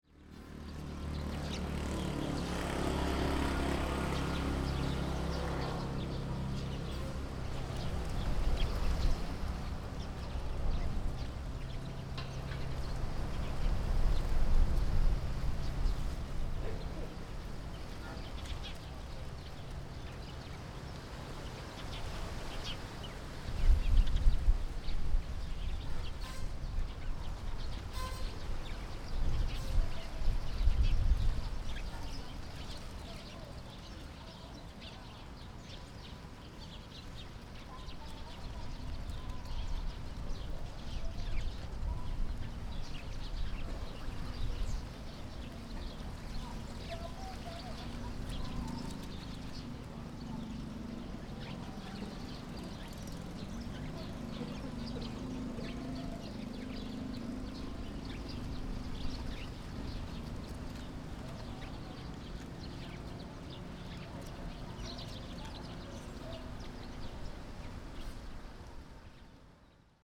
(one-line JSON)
{"title": "山水里, Magong City - Waterfront Park", "date": "2014-10-23 16:18:00", "description": "Birds singing, Traffic Sound\nZoom H6 Rode NT4", "latitude": "23.51", "longitude": "119.59", "altitude": "7", "timezone": "Asia/Taipei"}